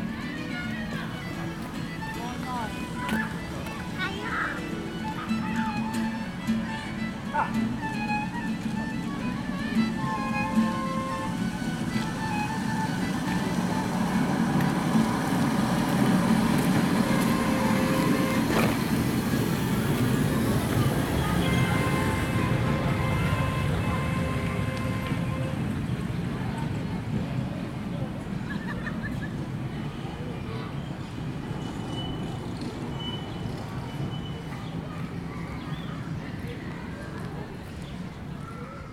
Montigny-lès-Metz, France - irish train in the park